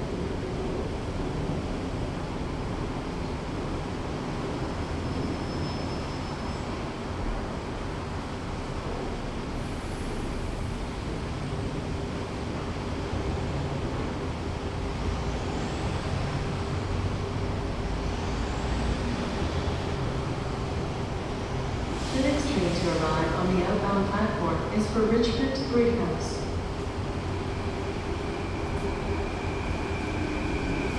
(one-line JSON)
{"title": "vancouver, canada line, marine drive station", "description": "going to work early morning", "latitude": "49.21", "longitude": "-123.12", "altitude": "14", "timezone": "Europe/Berlin"}